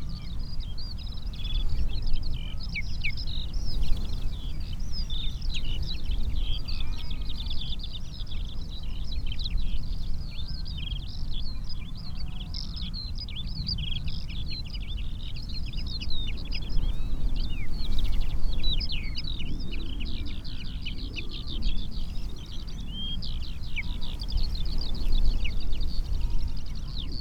Isle of Islay, UK - skylark song and resonating fence wires soundscape ...
Singing skylarks and resonating fence wires soundscape ... bird song and calls from ... snipe ... redshank ... raven ... mute swan ... cuckoo ... crow ... pheasant ... curlew ... jackdaw ... lapwing ... background noise ... windblast ... pushed a SASS in between the bars of a gate to hold it in place ...